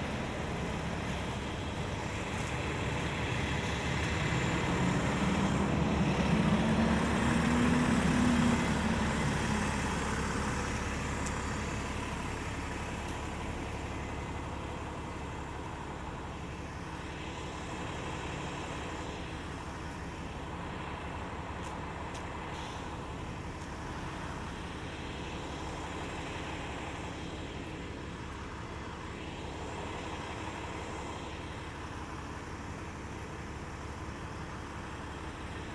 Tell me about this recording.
A populated bus stop used by hundreds of students. The rumbling of buses followed by the occasional conversations of university students. Recorded with binaural microphones.